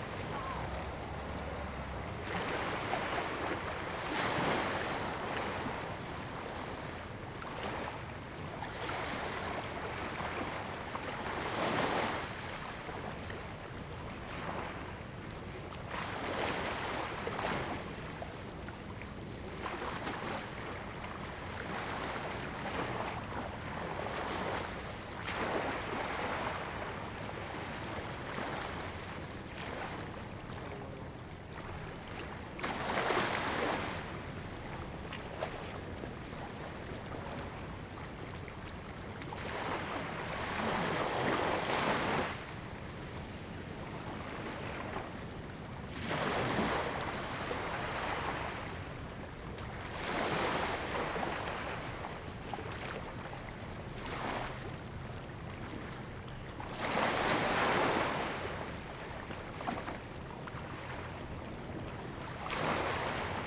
the waves, Small fishing pier
Zoom H2n MS+XY